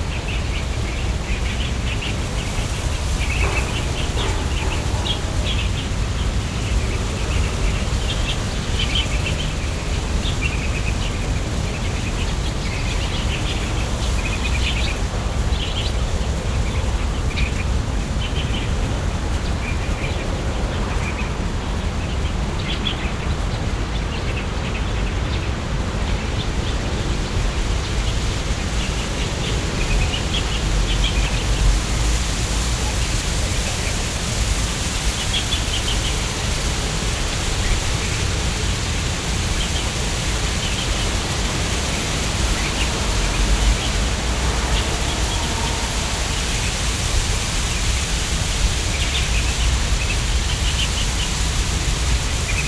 wugu, New Taipei City - Mangrove
台北市 (Taipei City), 中華民國, 11 January, ~13:00